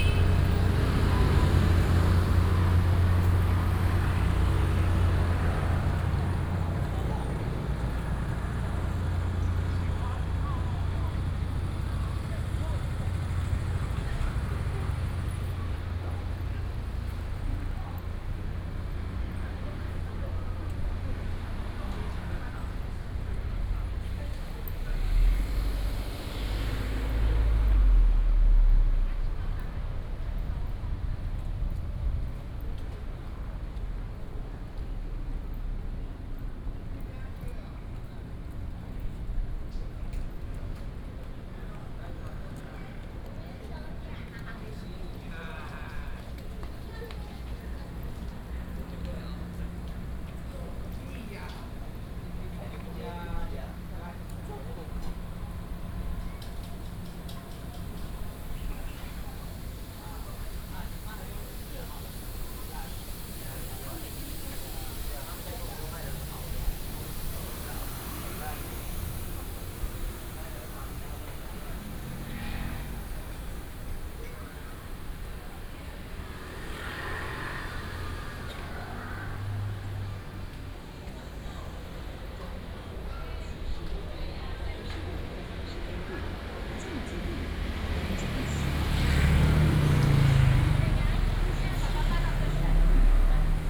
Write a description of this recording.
walking in the Street, Traffic Sound, Bird calls